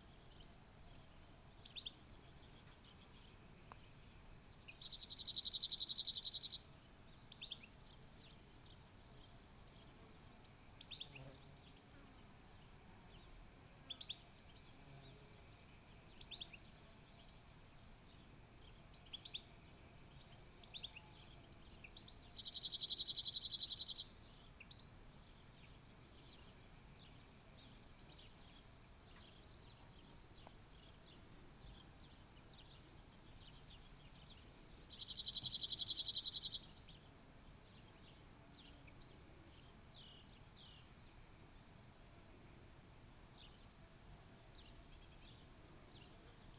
Mesa Vouni, Andros, Greece - Messa Vouni hillside
Just above the mountain village of Messa Vouni in the hot and still midday sun.